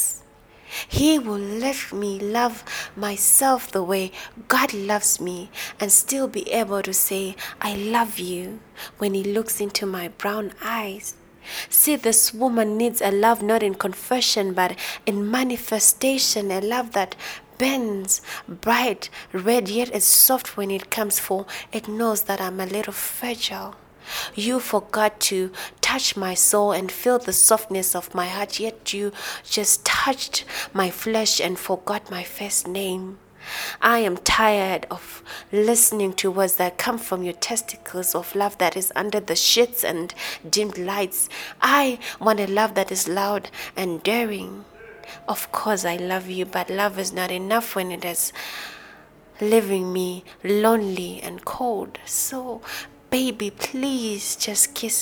The Book Cafe, Harare, Zimbabwe - More Blessings, “Kiss me good-bye…”
More Blessings, “Kiss me good-bye…”
more poems from More Blessings and Upmost at :
13 October 2012, 16:50